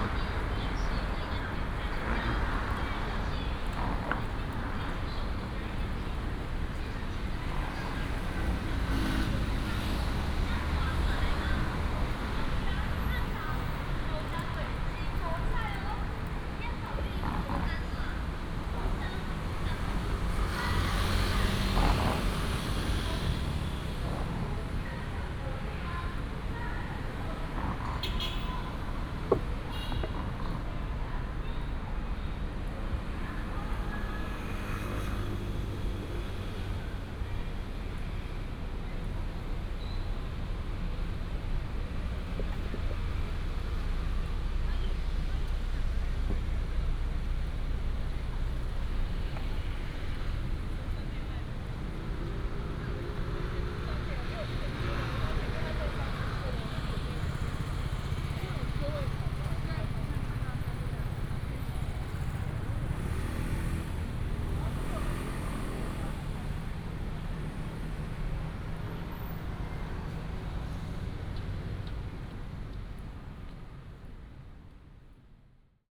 Tzu Wen Junior High School, Taoyuan Dist., Taoyuan City - Walking on the road
Traffic sound, Walking on the road
Taoyuan District, Taoyuan City, Taiwan